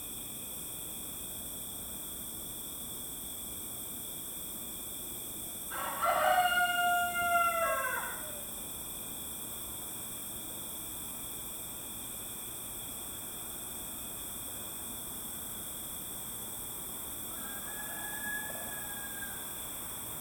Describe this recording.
Recorded with a Marantz PMD661 and a pair of DPA4060s